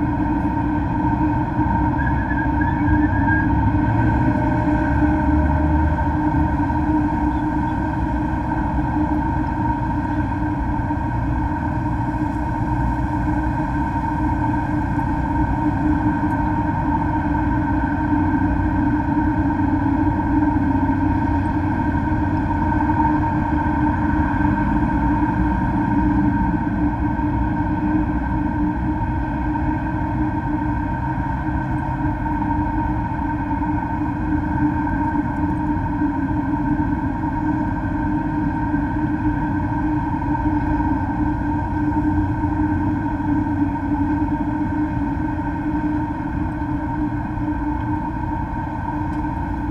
Maribor, Slovenia - one square meter: rusty pipe
a rusty length of pipe, approximately 50cm and open at both ends, lays in the grass near the concrete wall. one omnidirectional microphone is inserted in each end. all recordings on this spot were made within a few square meters' radius.